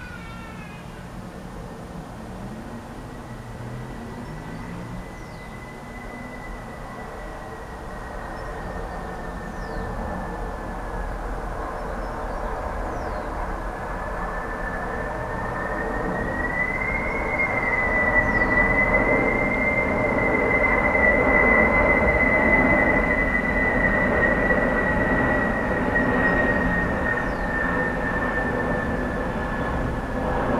Sitting on the bench, with planes passing by every 3.5 minutes... In the distance, continuously, the noise of the traffic on the ring road... Plenitude of these alienated modern times.
23 April 2022, 20:50